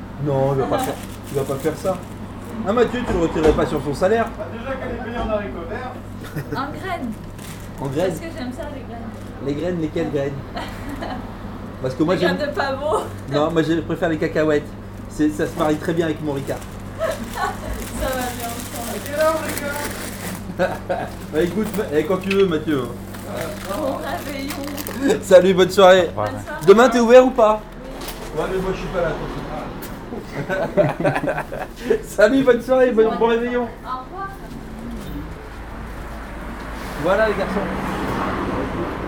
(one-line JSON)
{"title": "Maintenon, France - Bakery", "date": "2016-12-24 16:40:00", "description": "Recording of a bakery just before Christmas.", "latitude": "48.59", "longitude": "1.58", "altitude": "103", "timezone": "GMT+1"}